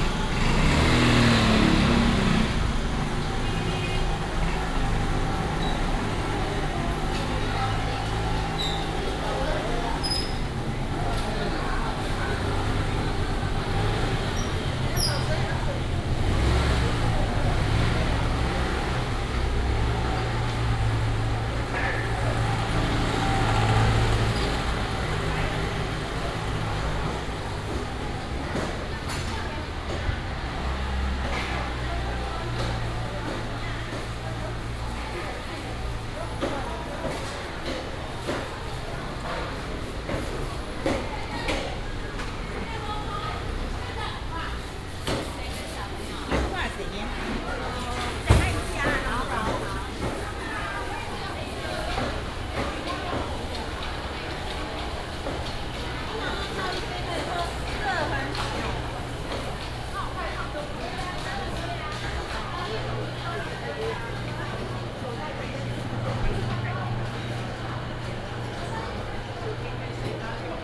{"title": "竹東中央市場, Zhudong Township - Walking in the traditional market", "date": "2017-01-17 11:11:00", "description": "Walking in the traditional market inside", "latitude": "24.74", "longitude": "121.09", "altitude": "123", "timezone": "GMT+1"}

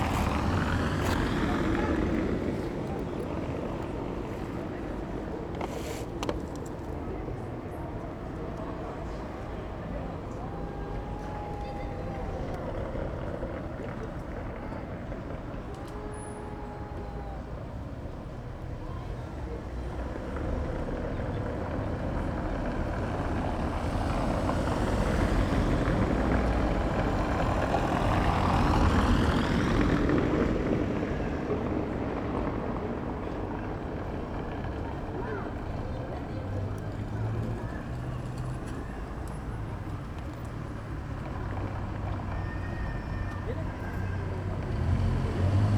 {"title": "berlin wall of sound-lohmuhlen-harzerstr.corner. j.dickens 020909", "latitude": "52.49", "longitude": "13.44", "altitude": "35", "timezone": "Europe/Berlin"}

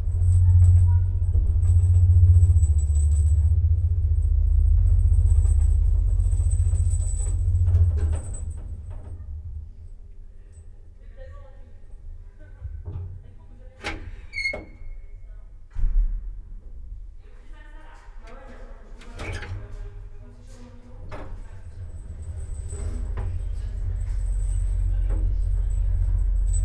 {"title": "Bruxelles, Ieperlaan - elevator ride", "date": "2013-03-24 19:20:00", "description": "ride in the narrow elevator of an appartment house\n(Sony PCM D50, DPA4060 binaural)", "latitude": "50.86", "longitude": "4.35", "altitude": "23", "timezone": "Europe/Brussels"}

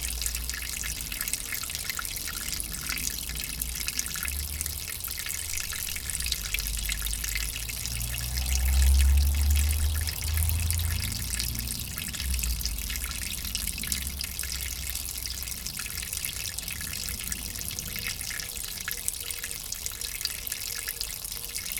{"title": "Stary Sącz", "date": "2011-06-01 12:30:00", "description": "St. Kingas Spring", "latitude": "49.56", "longitude": "20.64", "altitude": "313", "timezone": "Europe/Warsaw"}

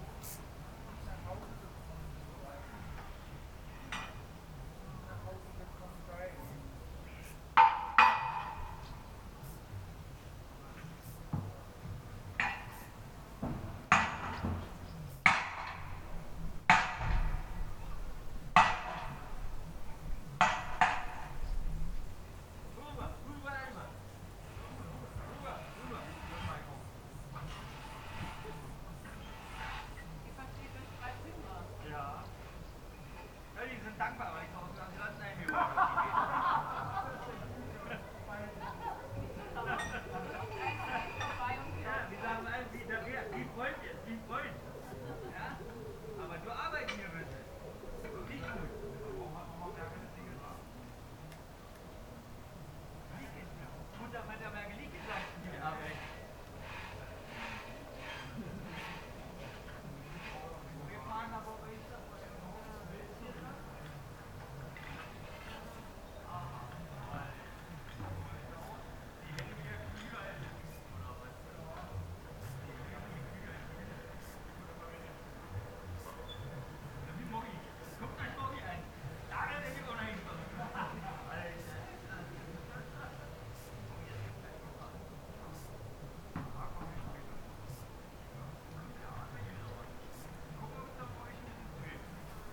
{"title": "Kirchmöser Ost - afternoon ambience /w work sounds", "date": "2022-08-31 14:34:00", "description": "Kirchmöser Ost, at the garden, late summer, rural afternoon ambience, neighbours at work\n(Sony PCM D50", "latitude": "52.38", "longitude": "12.44", "altitude": "35", "timezone": "Europe/Berlin"}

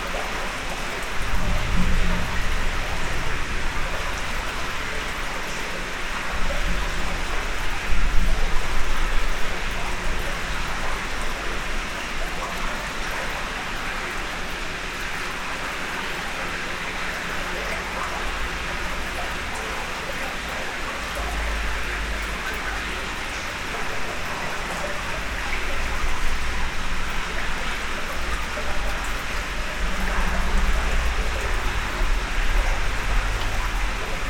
{"title": "Nivelles, Belgium - In the Nivelles sewers", "date": "2017-11-15 08:40:00", "description": "Waiting a few time in the Nivelles sewers. Its very very dirty and theres rats jumping everywhere. Im worried because its quite dangerous.", "latitude": "50.60", "longitude": "4.33", "altitude": "100", "timezone": "Europe/Brussels"}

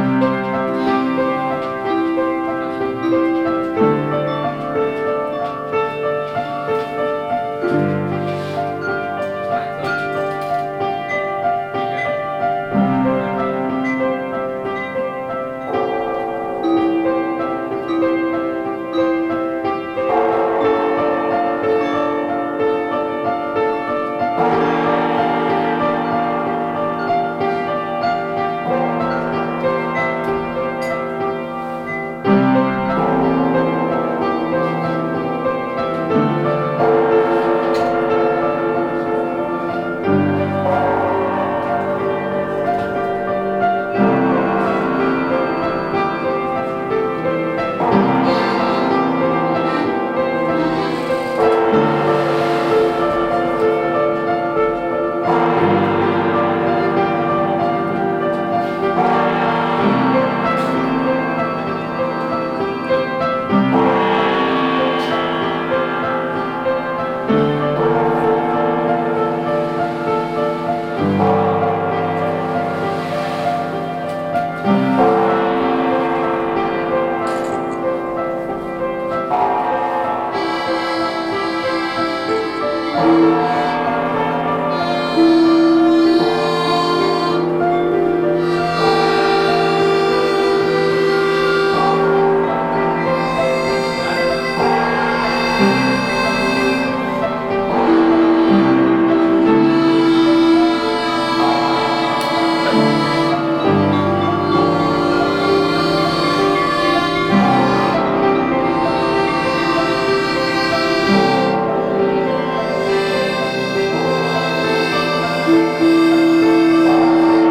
{"title": "Borbeck - Mitte, Essen, Deutschland - essen, traugott weise school, music class", "date": "2014-05-13 13:35:00", "description": "In der Traugott Weise Schule, einer Förderschule mit dem Schwerpunkt geistige Entwicklung - hier in einer Musik Klasse. Der Klang der Combo TWS Kunterbunt bei der Probe eines gemeinsam erarbeiteten Musikstücks.\nInside the Traugott Weise school - a school for special needs - in a music class. The sound of the TWS cpmbo Kunterbunt rehearsing a common music piece.\nProjekt - Stadtklang//: Hörorte - topographic field recordings and social ambiences", "latitude": "51.47", "longitude": "6.95", "altitude": "65", "timezone": "Europe/Berlin"}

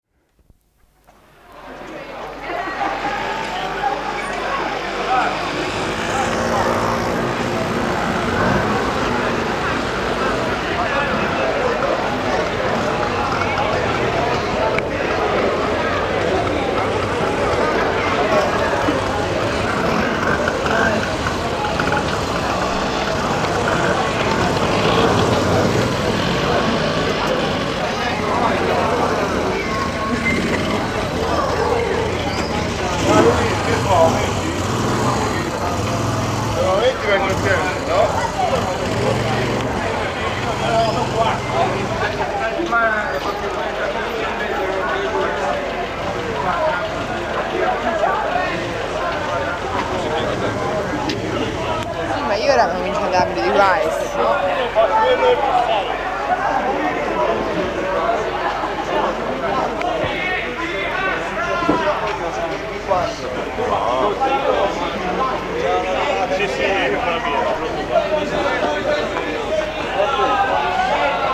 {"title": "Siena SI, Italien - Anniversario della Liberazione - Assembly and start of a run", "date": "1992-04-25 10:00:00", "description": "Menschen sind versammelt, es ist Feiertag „Tag der Befreiung Italiens vom Faschismus“ - es ist der Beginn eines Laufes: der Pistolenschuss. / people are gathered, it is a holiday - it is the beginning of a run: the gun shot. Sony Walkman cassette recording, digitalized.", "latitude": "43.32", "longitude": "11.33", "altitude": "335", "timezone": "Europe/Rome"}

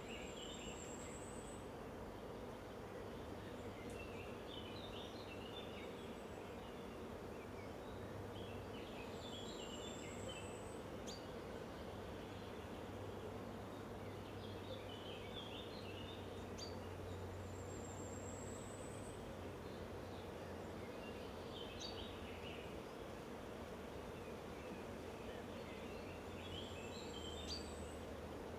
{"title": "Buchenberg, Deutschland - Unter der Linde", "date": "2005-06-29 08:41:00", "description": "Gesumme der Bienen.", "latitude": "47.73", "longitude": "10.15", "altitude": "953", "timezone": "Europe/Berlin"}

